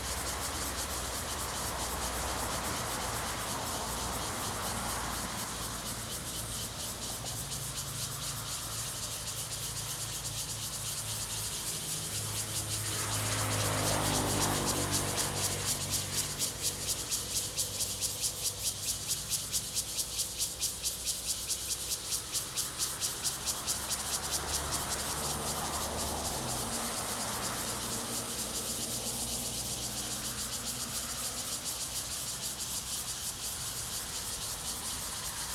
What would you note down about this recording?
Cicadas and traffic sound, The weather is very hot, Zoom H2n MS +XY